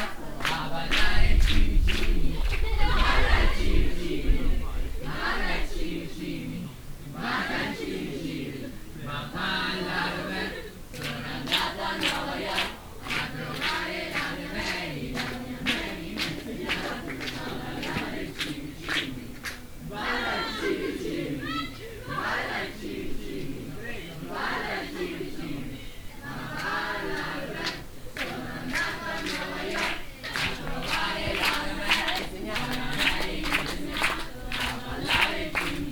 {"title": "alto, castello, catholic pathfinder camp", "date": "2009-07-26 18:53:00", "description": "morningtime at the castello, approaching on stony ground, catholic pathfinders playing a game while chanting\nsoundmap international: social ambiences/ listen to the people in & outdoor topographic field recordings", "latitude": "44.11", "longitude": "8.00", "altitude": "650", "timezone": "Europe/Berlin"}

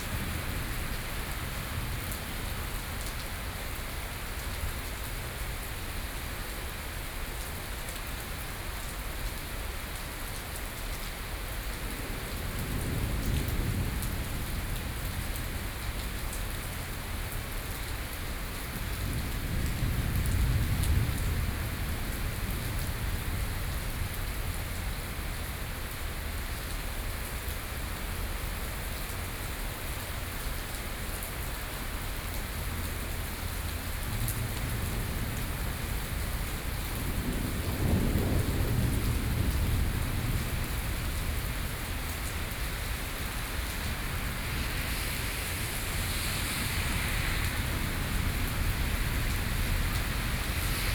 {"title": "tamtamART.Taipei - Thunderstorm", "date": "2013-06-23 16:18:00", "description": "Thunderstorm, Standing in the doorway, Sony PCM D50 + Soundman OKM II", "latitude": "25.05", "longitude": "121.52", "altitude": "24", "timezone": "Asia/Taipei"}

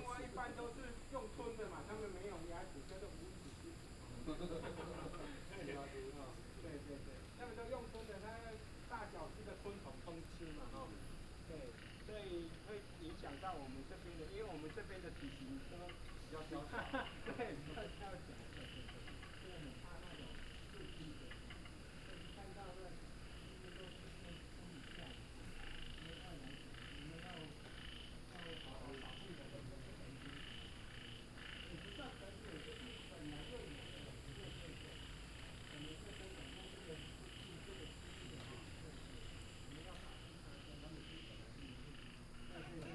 {"title": "TaoMi Li., 青蛙阿婆民宿 埔里鎮 - In Bed and Breakfasts", "date": "2015-08-12 19:42:00", "description": "Frog calls, In Bed and Breakfasts", "latitude": "23.94", "longitude": "120.94", "altitude": "463", "timezone": "Asia/Taipei"}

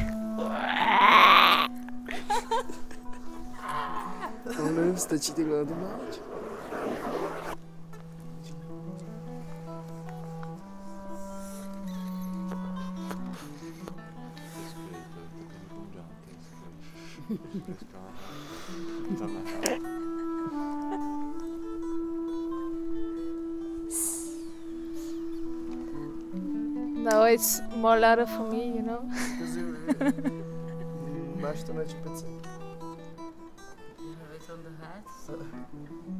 {"title": "Beatbox in the opening in Ukradena Galerie", "date": "2011-11-27 00:05:00", "description": "Young beatboxers during the opening...", "latitude": "48.81", "longitude": "14.31", "altitude": "485", "timezone": "Europe/Prague"}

